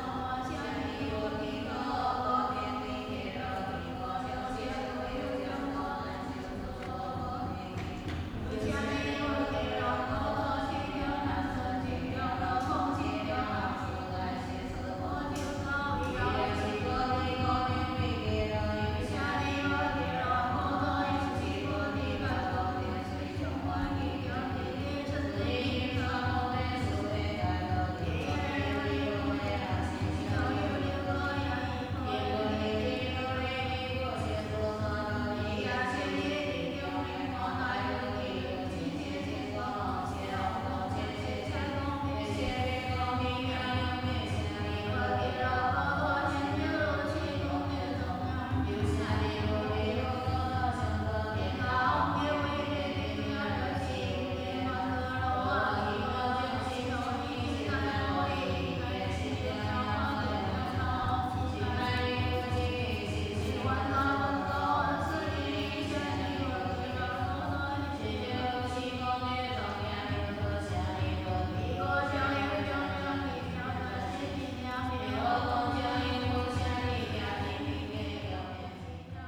Walking in the temple
Zoom H4n + Rode NT4